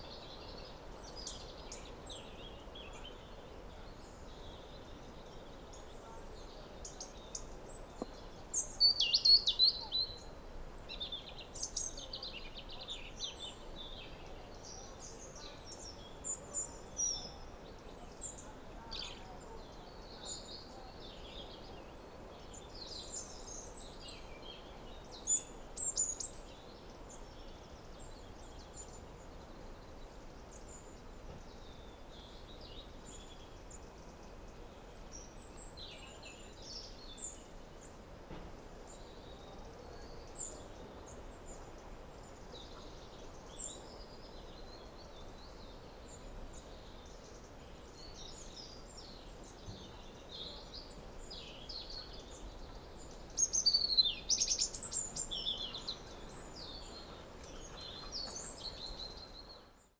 stromboli, ginostra - evening birds
evening ambience, autumn on stromboli